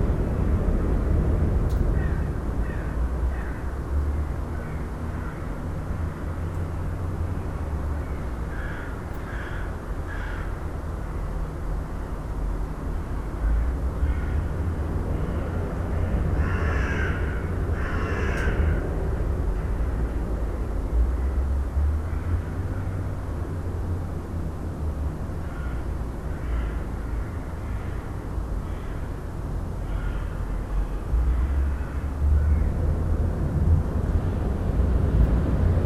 Seraing, Belgique - The coke plant
In the very old power plant of the Seraing coke plant, recorder left alone at the window, with crows discussing and far noises of the Shanks factory (located west). This power plant is collapsed and abandoned since a very long time. Crows like this kind of quiet place.